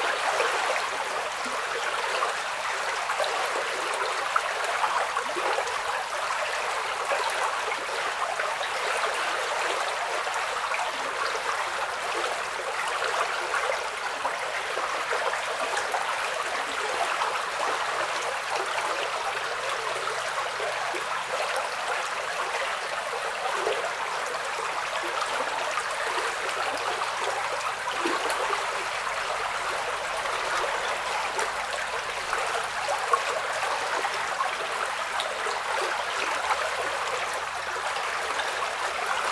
Lithuania, Vyzuonos, outflow from the tube
waters outflow from the tube
23 November 2011, 13:28